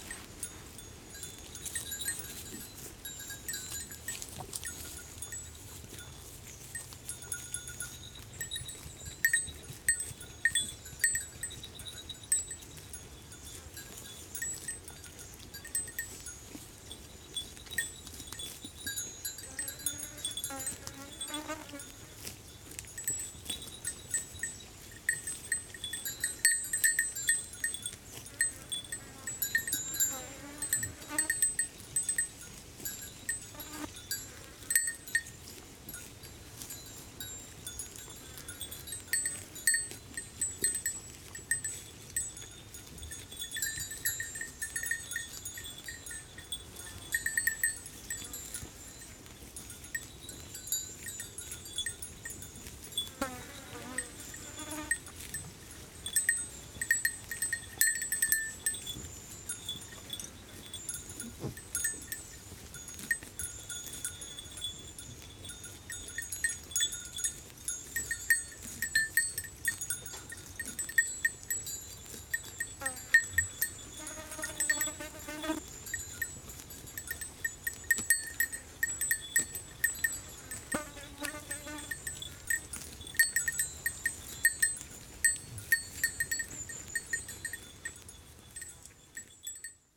Unnamed Road, Les Monts d'Aunay, France - Goats and bell on the Montpinçon
Peaceful weather on the highest point of Calvados
ORTF
Tascam DR100MK3
Lom Usi Pro.